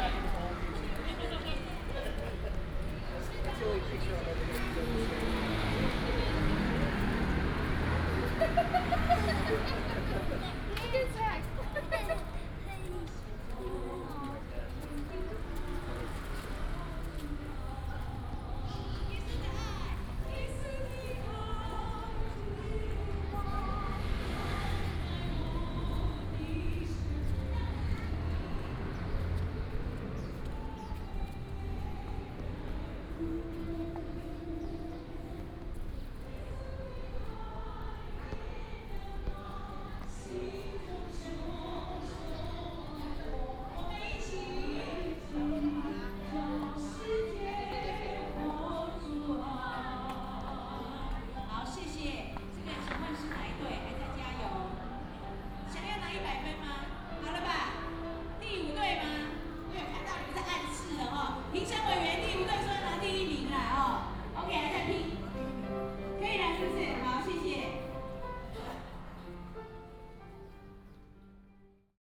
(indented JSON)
{
  "title": "Zhongzheng Rd., Tamsui Dist., New Taipei City - Soundwalk",
  "date": "2016-03-14 16:03:00",
  "description": "Walking on the road, To the church, Traffic Sound",
  "latitude": "25.17",
  "longitude": "121.44",
  "altitude": "13",
  "timezone": "Asia/Taipei"
}